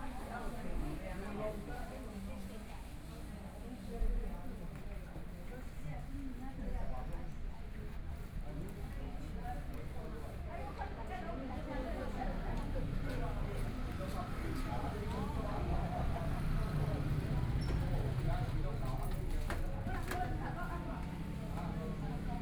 Fuxing Rd., Taitung - In the bus station
In the bus station, Traffic Sound, Dialogue among the elderly, Dogs barking, Binaural recordings, Zoom H4n+ Soundman OKM II ( SoundMap2014016 -4)